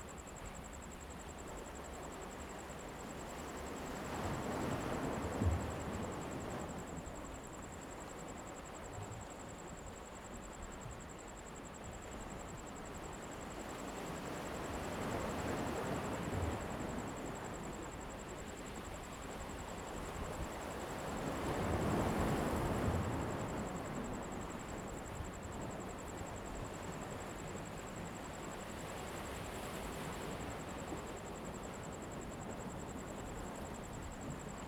南濱公園, Hualien City - Waterfront Park at night
Waterfront Park at night, Sound of the waves
Zoom H2n MS+XY